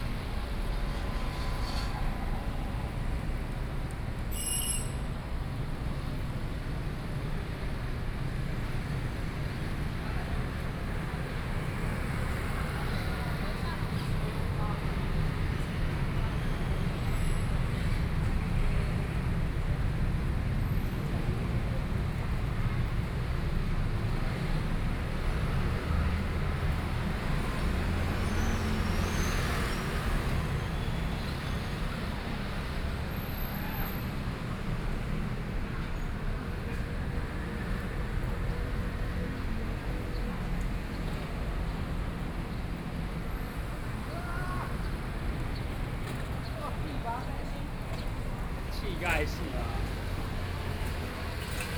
Binhai 1st Rd., Gushan Dist. - walking on the Road
walking on the Road, Traffic Sound, Various shops voices
Sony PCM D50+ Soundman OKM II
Kaohsiung City, Taiwan, 21 May 2014